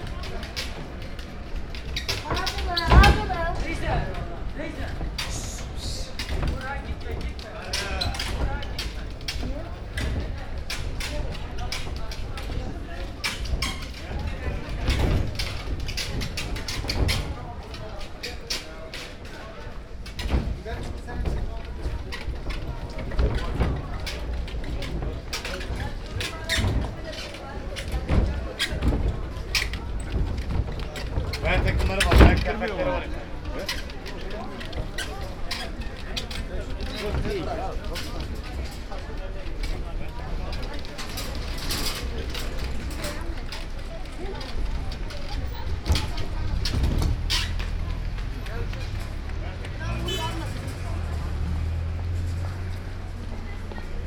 Fatih/Istanbul, Turkey - Wood Carrier
Man transporting wood on cart.Voices. Binaural recording, DPA mics.